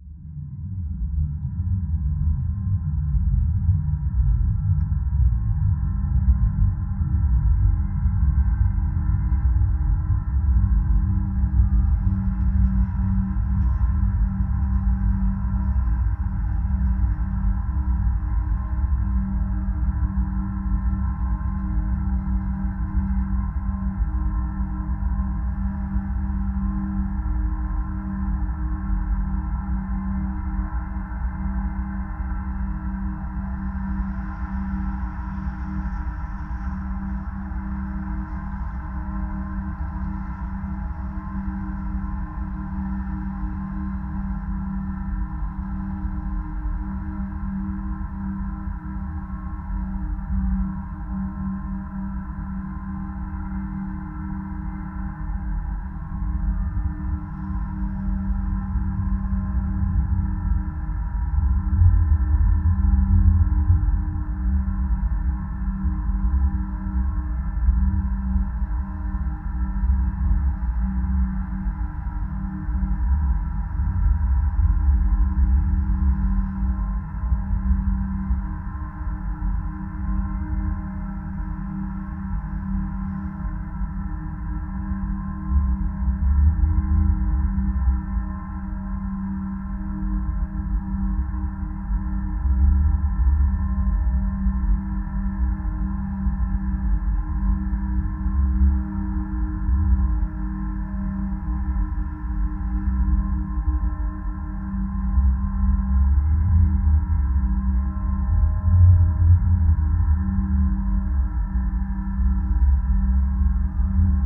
mobile tower at the road. contact microphones on the tower's support wires